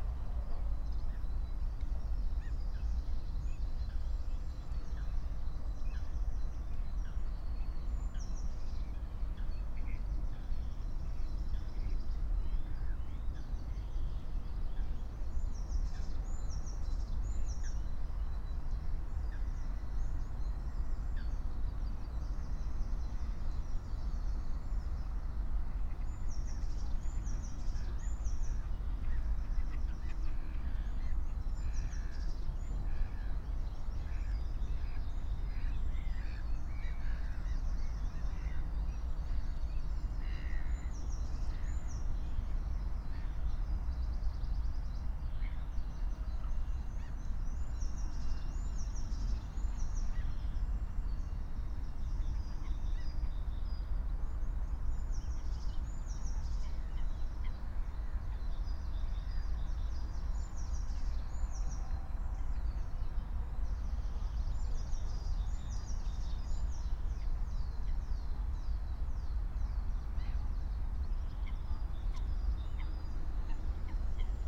11:05 Berlin Buch, Lietzengraben - wetland ambience